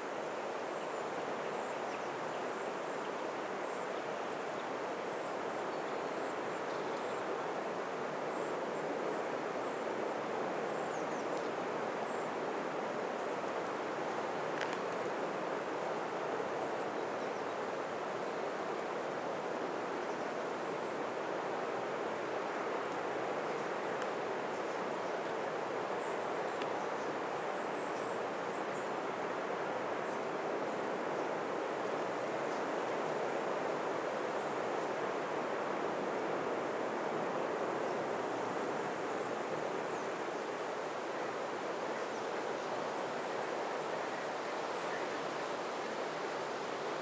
Gotska Sandön, Sweden - Forest by the light house in Gotska Sandön
A set of recordings made in one autumn morning during a work stay in the northwest coast of the uninhabited island of Gotska Sandön, to the east of Gotland, Sweden. Recorded with a Sanken CSS-5, Sound devices 442 + Zoom H4n.
Most of the tracks are raw with slight level and EQ corrective adjustments, while a few others have extra little processing.